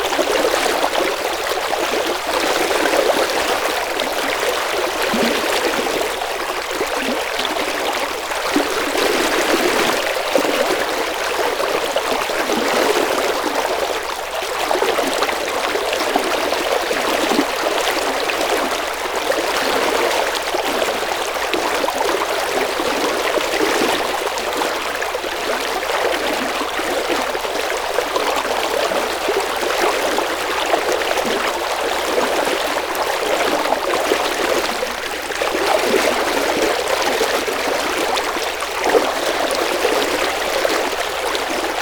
river Drava, Loka - legs and river